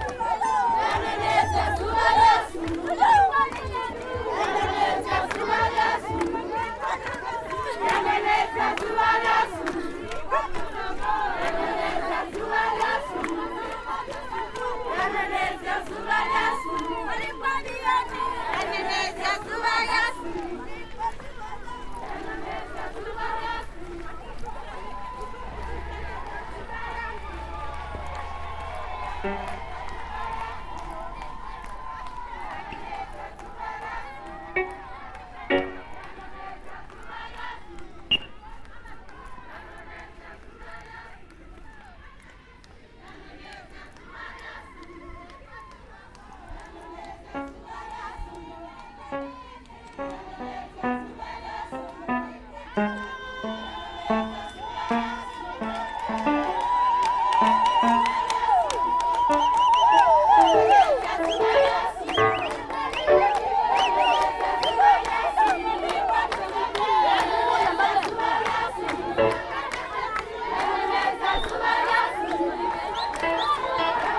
recordings from the first public celebration of International Women’s Day at Binga’s urban centre convened by the Ministry of Women Affairs Zimbabwe
Binga, Zimbabwe, 29 April